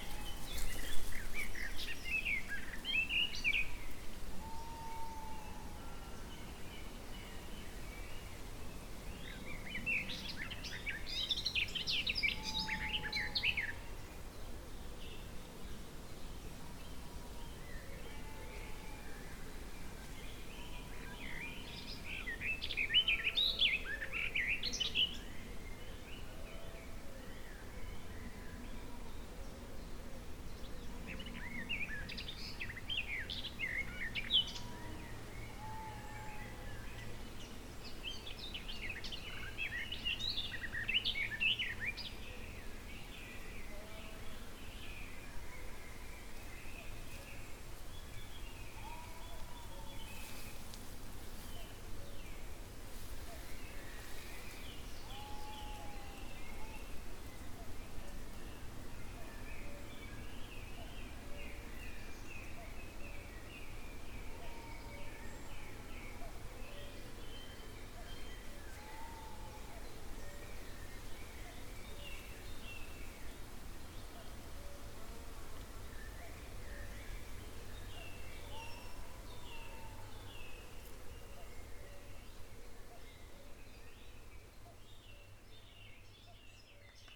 Mill Wood near Brightling, East Sussex - Garden Warbler and Cuckoo
Recorded whilst on cycle along this bridleway at TQ695227. Garden Warbler heard close to path (with Cuckoo to the north).
United Kingdom